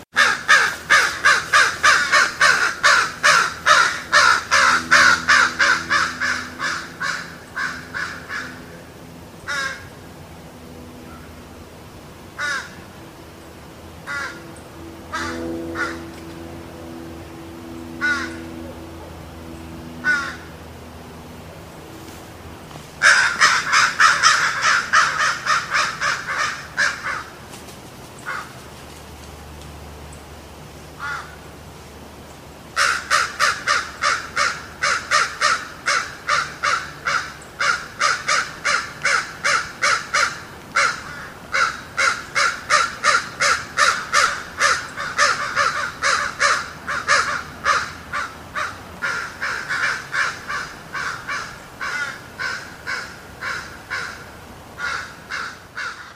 5 Krähen, die sich gegenseitig um das beste Revier in den Bäumen streiten.
Aufnahme mit Zoom H-1.
Standort der Aufnahme:
N51° 07.300 E006° 44.527
Neuss, Germany, March 2012